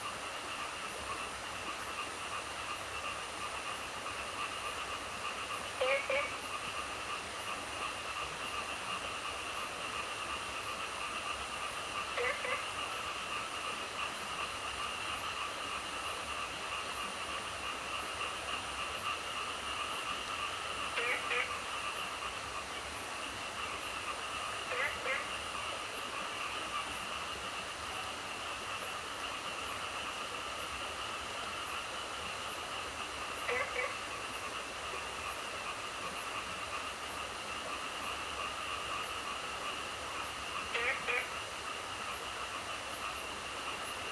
中路坑溪, 桃米里 Puli Township - Stream and Frog Sound
Stream, Frog Sound, late at night
Zoom H2n MS+XY
Nantou County, Taiwan